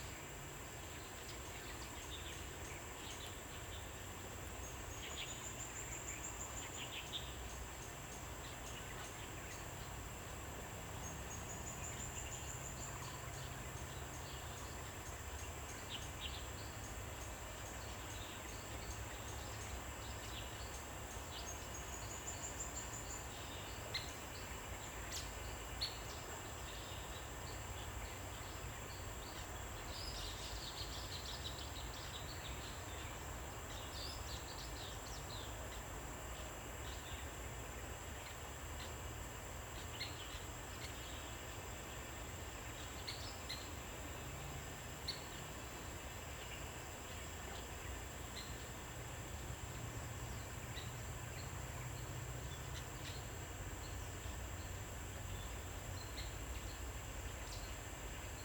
組合屋生態池, 埔里鎮桃米里 - Ecological pool
Cicadas cry, Frogs chirping, Bird sounds
Zoom H2n MS+XY